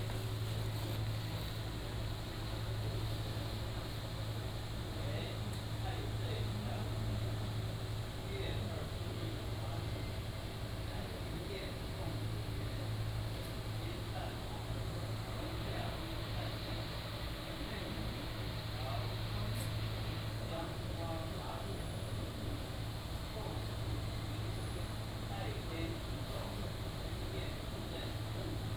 Zhongshan Rd., Magong City - In the temple
In the temple